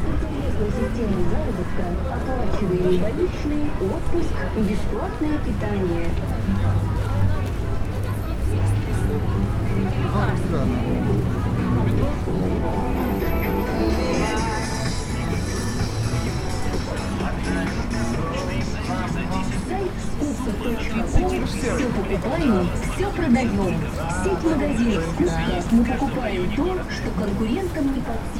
Sennaya Square, Sankt-Peterburg, Russia - Sennaya square
Sennaya square is a large public transportation knot and a trading area with many small retail shops.
On this recording you hear audio commercials and music coming from speakers mounted outside stores blending with sounds of footsteps, conversations, street lights signals and traffic noise. It is an example of a dense urban soundscape, lo-fi in R. Murray Schafer's terms, but vibrant and culturally interesting.